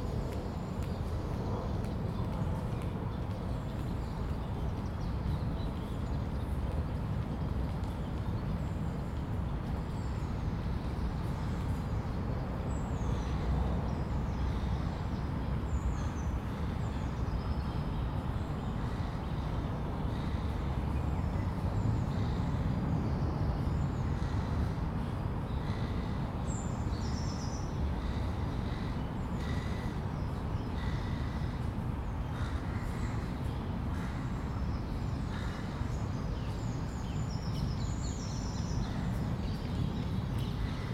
St James's Park. London - St James's Park Early Morning
This was recorded at about 07:20. It includes the sounds of nature, an emergency service vehicle travelling towards Trafalgar Square along The Mall, a rather impressive motor bike and various joggers and walkers.
London, UK, 15 June, 07:20